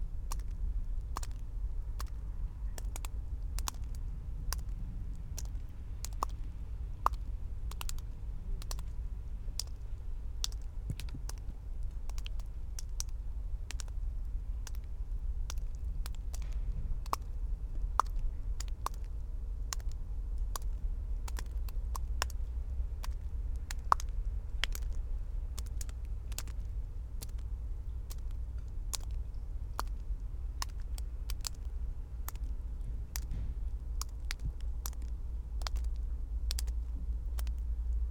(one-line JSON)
{"title": "Utena, Lithuania, water drops on ground", "date": "2022-02-11 17:45:00", "description": "Melting water drops on ground", "latitude": "55.51", "longitude": "25.57", "altitude": "123", "timezone": "Europe/Vilnius"}